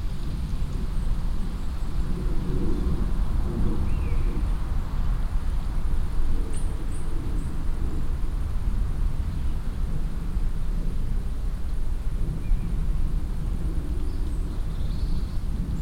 waldberg, forest with woodpecker
Inside the forest. The sound of a woodpecker working on an old trunk and a plane passing the sky
Waldberg, Wald mit Specht
Im Wald. Das Geräusch von einem Specht, der an einem alten Stamm arbeitet, und ein Flugzeug fliegt am Himmel.
Waldberg, forêt avec pivert
En forêt. Le bruit d’un pivert qui cogne contre un vieux tronc d’arbre et un avion qui vole dans le ciel.